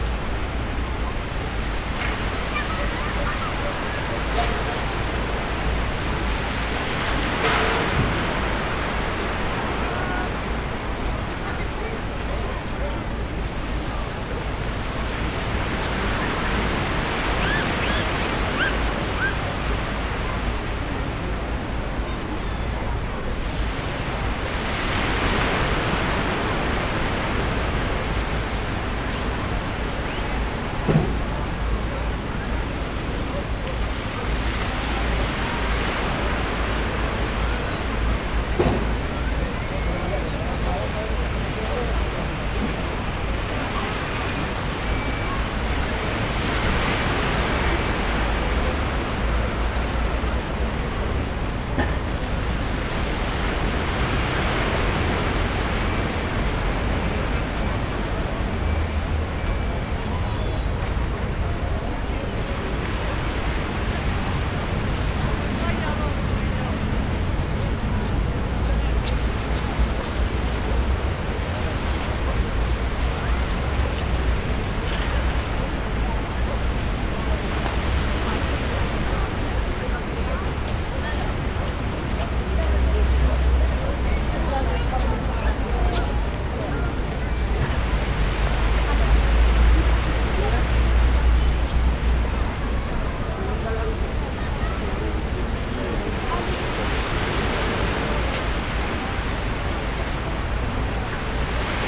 Kolon Pasealekua, Donostia, Gipuzkoa, Espagne - facing the ocean
facing the ocean wave and city sound
Captation ZOOM H6
Gipuzkoa, Euskadi, España, 26 May, ~12:00